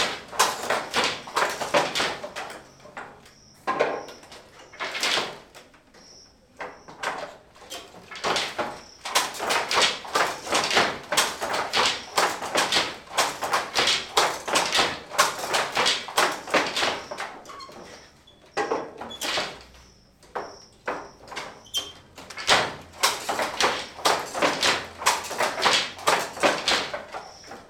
Bukoto, Kampala, Uganda - loom
room with men working on handlooms, recorded with a zoom h2, using 2channelsurround mode